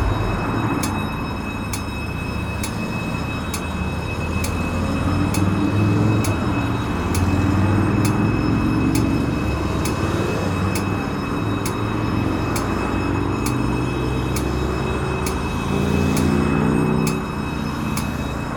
Brugge, België - Red light signal
During an heavy traffic at the Katelijnepoort, a red light signal sounds the traffic for blind people. The swing bridge sounds its alarm because a barge is approaching.
Brugge, Belgium, 16 February 2019, 10:00